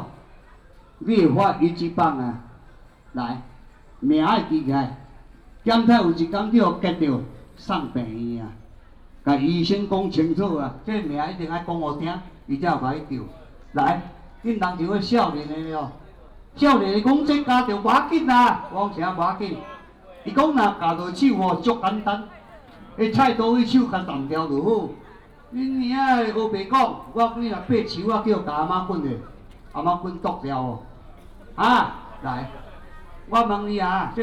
{"title": "白沙屯, 苗栗縣通霄鎮 - promoted products", "date": "2017-03-09 10:17:00", "description": "Temporary marketplace, promoted products", "latitude": "24.57", "longitude": "120.71", "altitude": "6", "timezone": "Asia/Taipei"}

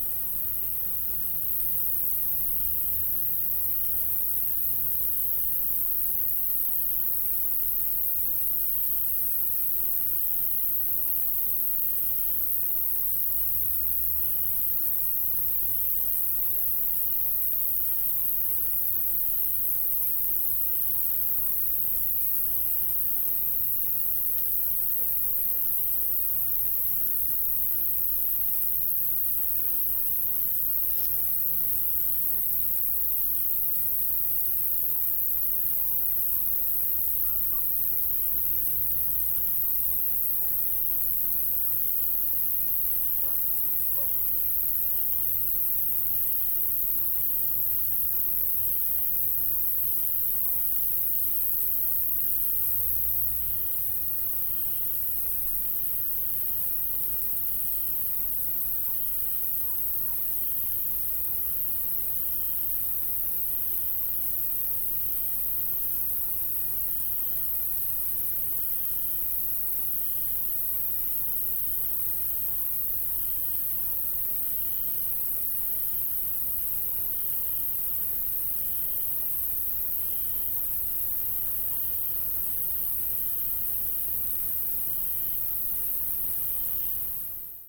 {"title": "Ellend, Hangfarm, Magyarország - High-pitched crickets of the nightfall", "date": "2019-09-06 19:06:00", "description": "Mass of crickets of the nightfall on the end of a very dry summer period. They're high-pitched ones 'turning on' and 'off'. One can listen to them only on the end of the day/beginning of the night.", "latitude": "46.06", "longitude": "18.38", "altitude": "163", "timezone": "Europe/Budapest"}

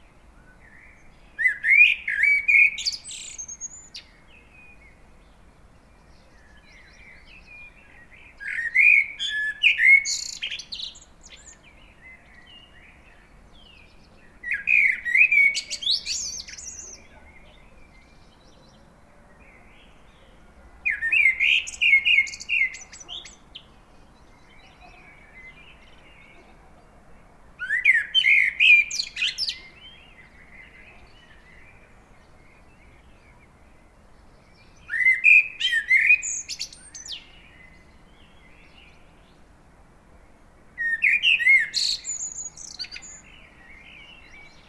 {"title": "Varaždin, Croatia - Blackbird song in early dawn", "date": "2015-04-19 05:00:00", "description": "Blackbird song from a balcony in early dawn. Recorded in XY technique.", "latitude": "46.31", "longitude": "16.34", "altitude": "174", "timezone": "Europe/Zagreb"}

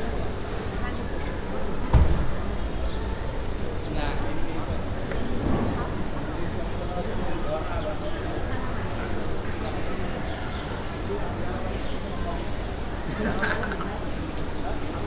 {"title": "Uttara, Dhaka, Bangladesh - Afternoon ambience, a neighbourhood in Uttara, Dhaka", "date": "2019-06-15 14:41:00", "description": "Uttara is a upper middleclass/ middleclass neighbourhood built near Dhaka internation airport, outside the main city area. This a summer afternoon recording, I was standing with the mic on a small street, off-main road.", "latitude": "23.88", "longitude": "90.39", "altitude": "13", "timezone": "Asia/Dhaka"}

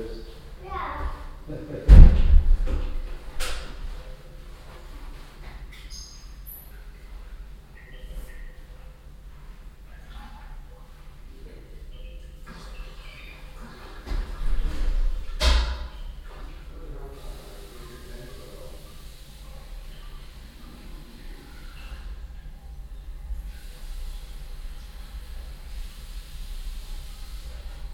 {"title": "wolfsburg, autostadt, toilettenklanginstallation + alltagsgeschäfte - wolfsburg, autostadt, toilettenklanginstallation +", "description": "klanginstallation und toilettenalltag in der vw autostadt\nsoundmap:\nsocial ambiences, topographic field recordings", "latitude": "52.43", "longitude": "10.79", "altitude": "65", "timezone": "GMT+1"}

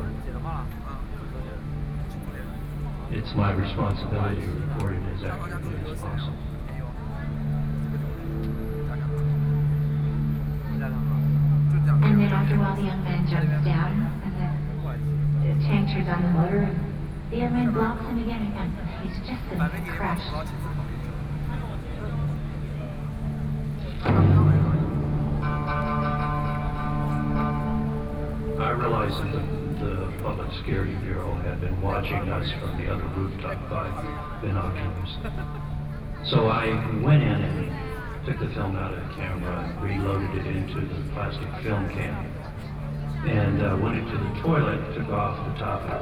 {"title": "National Chiang Kai-shek Memorial Hall, Taipei - June 4th event activity", "date": "2013-06-04 19:31:00", "description": "The Gate of Heavenly Peace., Sony PCM D50 + Soundman OKM II", "latitude": "25.04", "longitude": "121.52", "altitude": "8", "timezone": "Asia/Taipei"}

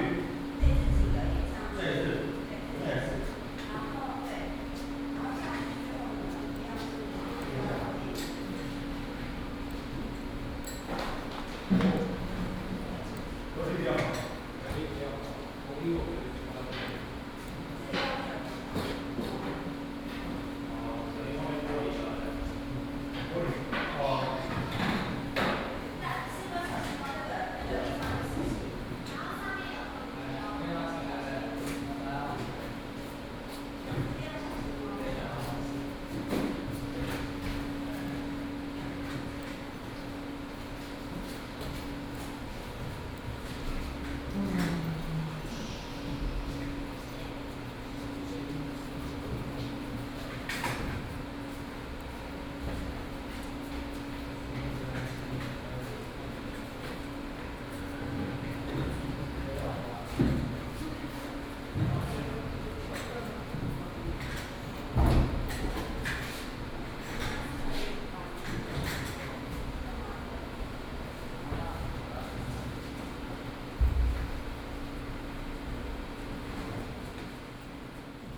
{"title": "Ln., Sec., Xinyi Rd., Da’an Dist. - Exhibition arrangement", "date": "2015-07-23 14:48:00", "description": "Exhibition arrangement\nBinaural recordings\nSony PCM D100+ Soundman OKM II", "latitude": "25.03", "longitude": "121.54", "altitude": "20", "timezone": "Asia/Taipei"}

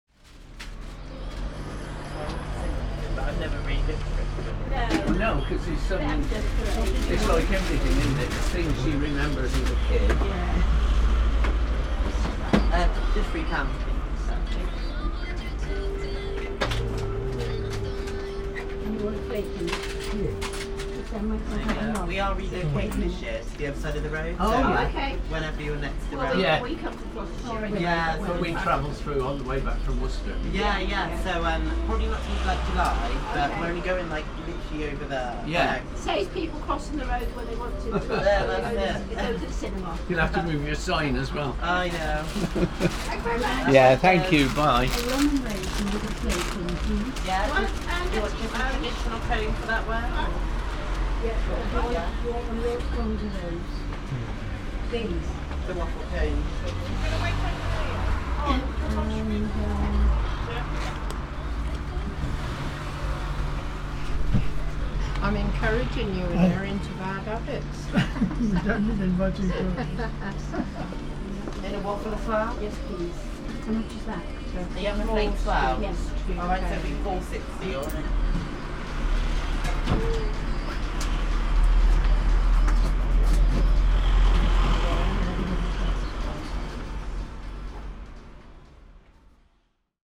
{"title": "Candid Voices in a shop at Tewkesbury, Worcestershire, UK - Inside a Shop", "date": "2019-07-12 12:17:00", "description": "Random conversations. Mix Pre 3 + 2 Beyer lavaliers.", "latitude": "51.99", "longitude": "-2.16", "altitude": "17", "timezone": "Europe/London"}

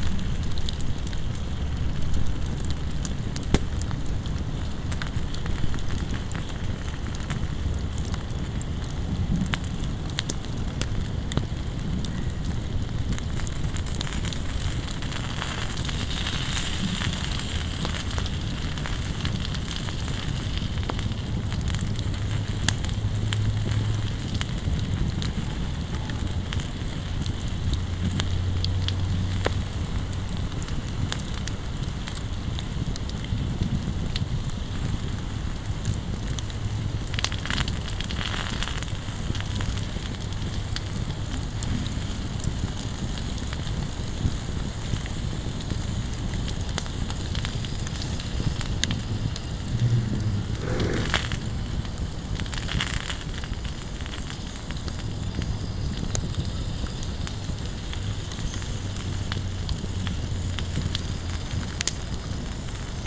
{"title": "Kolonia Mazurska, Mierki, Poland - (853 AB) Fireplace in the woods", "date": "2021-10-14 20:45:00", "description": "Stereo recording of a fireplace with some grill and food on it (thus the water hisses). In the background, there is a chance for some horse sounds from a barn.\nRecorded with a pair of Sennheiser MKH 8020, 17cm AB, on Sound Devices MixPre-6 II.", "latitude": "53.60", "longitude": "20.36", "altitude": "175", "timezone": "Europe/Warsaw"}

